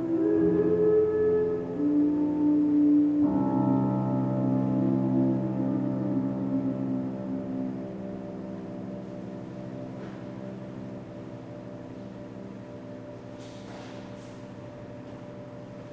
montelparo, aspettando paesaggi umani, aprile, ore, con igig - kasinsky "aspettando paesaggi umani" 29 aprile 2008, ore 17.51, con igig